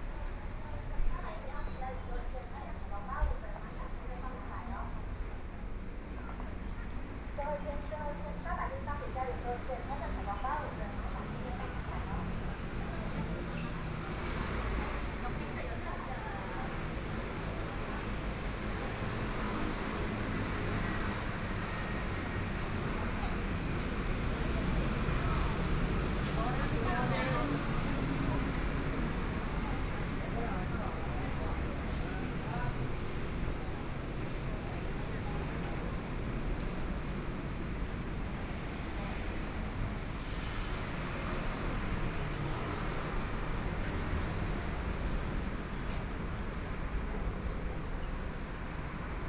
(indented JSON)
{
  "title": "Minquan West Road, Taipei City - walking on the Road",
  "date": "2014-02-06 12:44:00",
  "description": "walking on the Road, Traffic Sound, Motorcycle sound, Various shops voices, Binaural recordings, Zoom H4n + Soundman OKM II",
  "latitude": "25.06",
  "longitude": "121.52",
  "timezone": "Asia/Taipei"
}